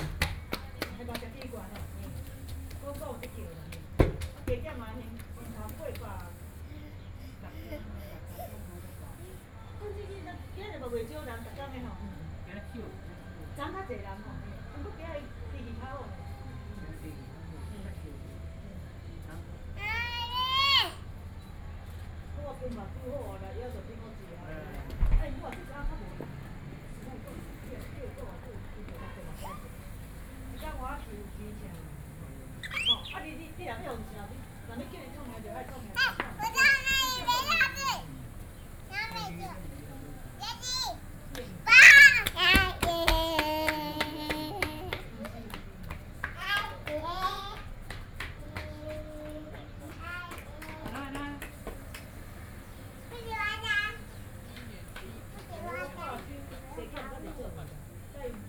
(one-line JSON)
{"title": "Shihfen Train Station, New Taipei City - Children running play", "date": "2012-11-13 15:41:00", "latitude": "25.04", "longitude": "121.78", "altitude": "179", "timezone": "Asia/Taipei"}